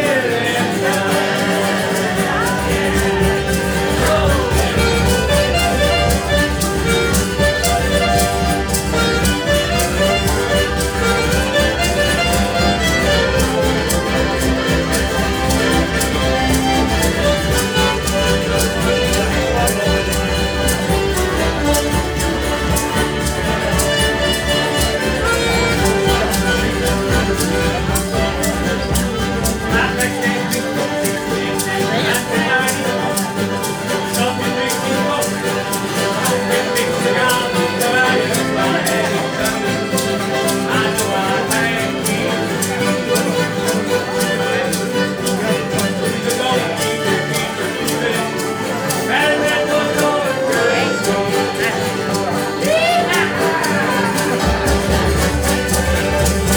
Music in the bar, Skipton, UK - Boathouse jam
England, United Kingdom